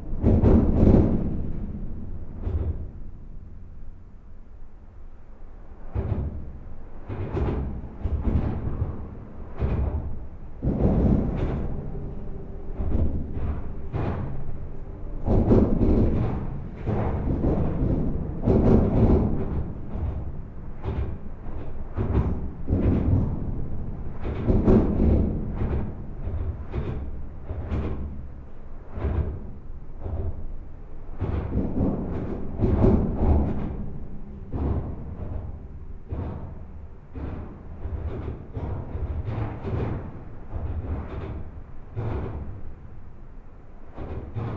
Namur, Belgique - The viaduct
This viaduct is one of the more important road equipment in all Belgium. It's an enormous metallic viaduct. All internal structure is hollowed. This recording is made from the outside, just below the caisson.